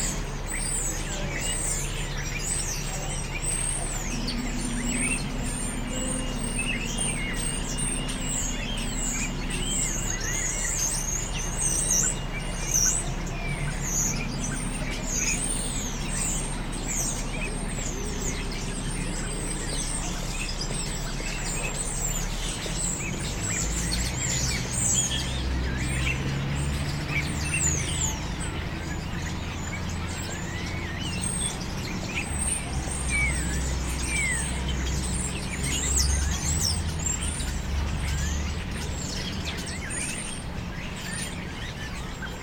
Starlings on Winter evenings in a tree at St. Mary's Butts, Reading, UK - Roosting Starlings
I had noticed on several trips into town that of an evening a certain tree fills with roosting Starlings. The noise is quite amazing of these tiny birds, all gathering in the tree together. In Winter their collected voices offer a sonic brightness to countenance the dismal grey and early darkness of the evenings. To make this recording I stood underneath the tree, very still, listening to the birds congregating in this spot. You can hear also the buses that run past the church yard where the tree stands, and pedestrians walking on the paths that flank it. Recorded with the on board microphones of the EDIROL R-09.